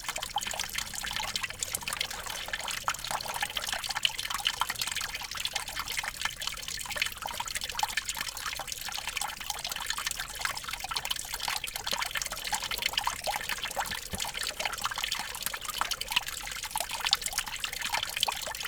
neoscenes: spring melt-water
Listening to springtime in the Rocky Mountains, as icy melt-water erodes the conglomerate sandstone of Hidden Mesa ... under the constant drone of air traffic.
CO, USA, 30 March